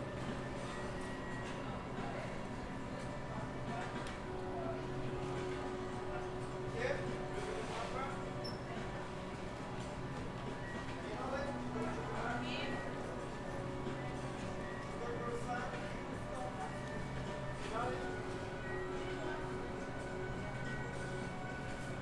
Boston Logan Airport - Sitting Outside Starbucks
Sitting outside the Starbucks in Terminal B. Starbucks was the only thing open at that early hour meanwhile a line started to form at the nearby Dunkin waiting for them to open
Massachusetts, United States, 24 May, 04:18